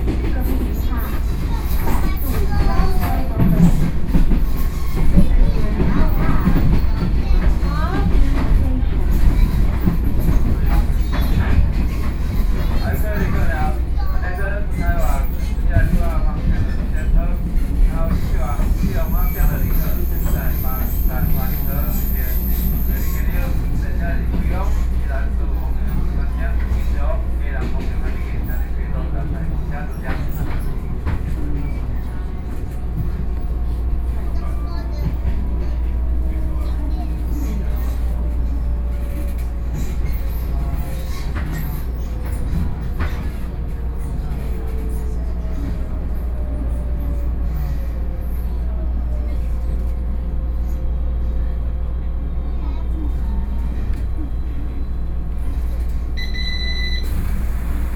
Keelung, Taiwan - On the train
Keelung City, Taiwan